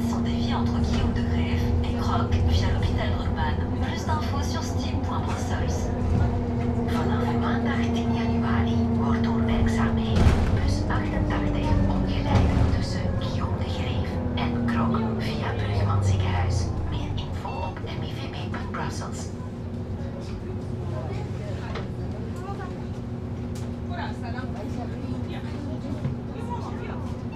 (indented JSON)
{
  "title": "Sq. Prince Léopold, Bruxelles, Belgique - In the Bus 89",
  "date": "2022-07-19 15:04:00",
  "latitude": "50.88",
  "longitude": "4.34",
  "altitude": "26",
  "timezone": "Europe/Brussels"
}